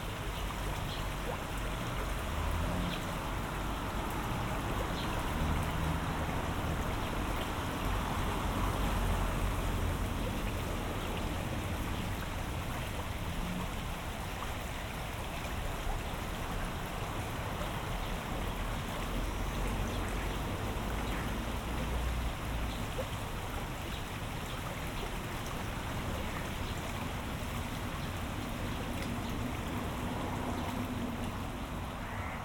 Kuldīga, evening ambience
Little water channel at the church.
Kurzeme, Latvija, 11 July 2021